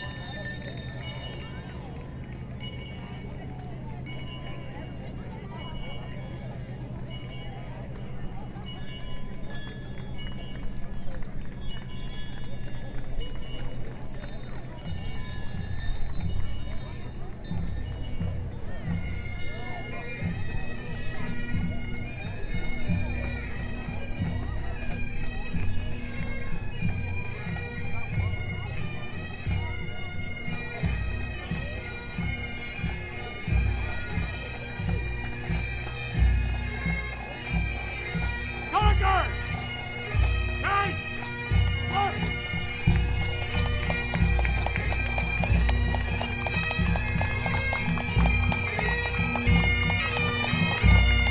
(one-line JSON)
{"title": "Westville Canada Day Parade, July 1st 2010 11.30hrs", "date": "2010-07-01 11:30:00", "latitude": "45.56", "longitude": "-62.71", "altitude": "68", "timezone": "America/Halifax"}